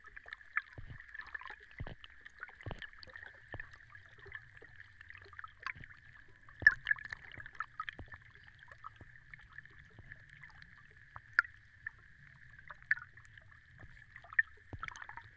lake Kertuoja, Lithuania, underwater
hydrophone...the las recording of one of JrF hydrophones - it broke during the session
9 August 2017